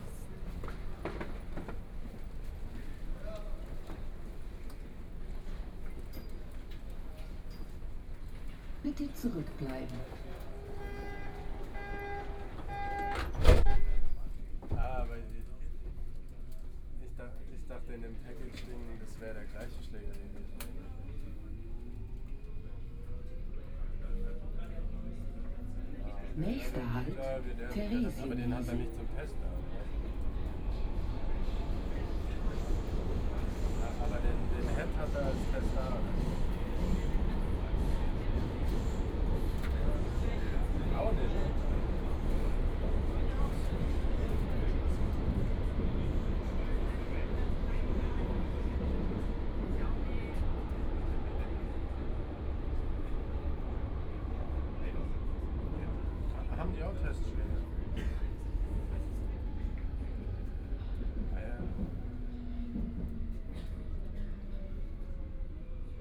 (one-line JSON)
{"title": "Theresienwiese, 慕尼黑德國 - U bahn", "date": "2014-05-06 21:25:00", "description": "Line U5, from Hauptbahnhof station to Theresienwiese station", "latitude": "48.14", "longitude": "11.55", "altitude": "524", "timezone": "Europe/Berlin"}